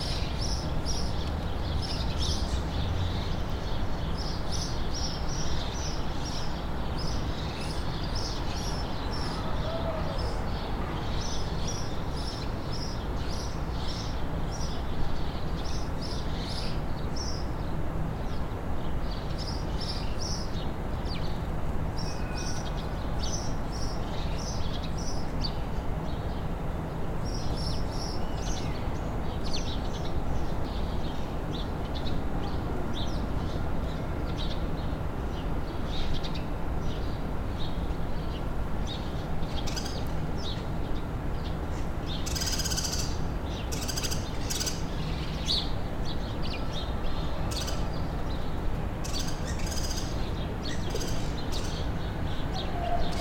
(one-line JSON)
{"title": "Rue Jean Fleuret, Bordeaux, France - The brutalist showpiece 02", "date": "2022-02-12 09:15:00", "description": "Mériadeck is the “post-apocalyptic” concrete district of Bordeaux.\nIt was built in the 1960’s, wiping out a former working-class neighborhood that had become unhealthy.\nIt is part of the major urban renewal programs carried out after the Second World War in France that embraced the concept of urban planning on raised concrete slabs from the 1950s", "latitude": "44.84", "longitude": "-0.58", "altitude": "18", "timezone": "Europe/Paris"}